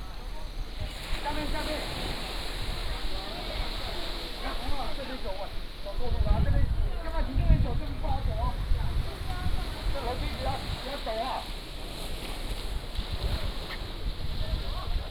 Small port, Sound of the waves, Many tourists